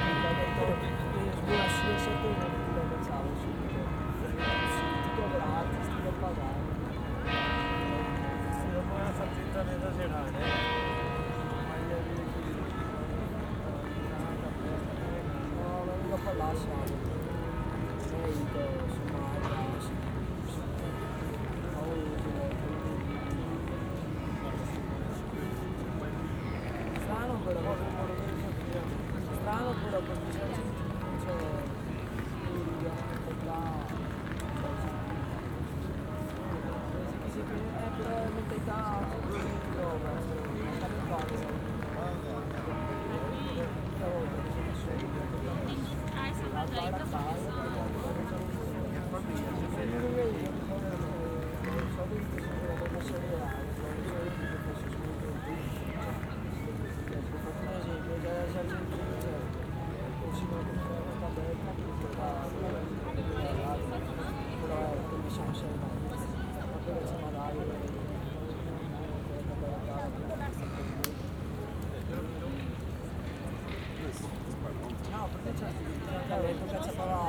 Ringing bells in noon and soundscapes around ( binaural)
OLYPUS LS-100
Venezia, Italy, 12 December 2016, 11:58